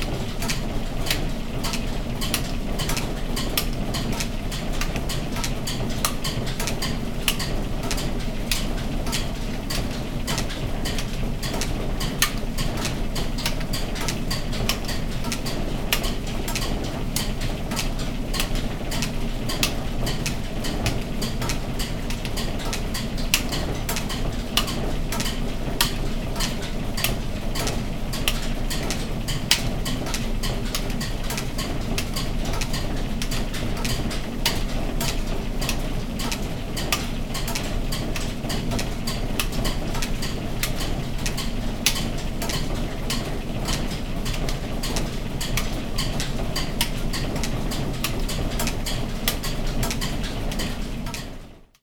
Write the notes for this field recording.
This is another recording of spinning machinery inside Coldharbour Mill. Amazing to hear the sound of yarn being created.